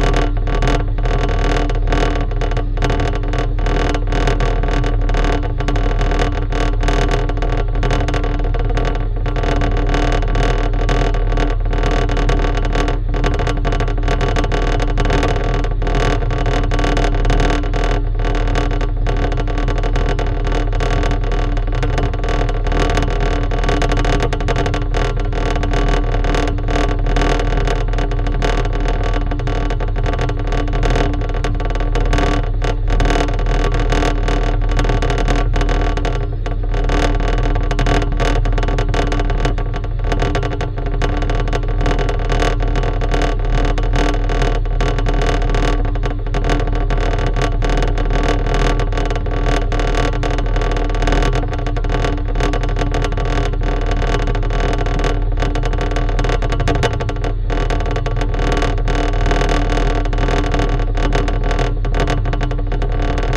Kaunas bus station, Vytauto pr., Kaunas, Lithuania - Vibrating plate of air circulation unit
Dual contact microphone recording of a vibrating plate of an air circulation unit near a revolving exit door of a bus station.
19 July 2019, 10:00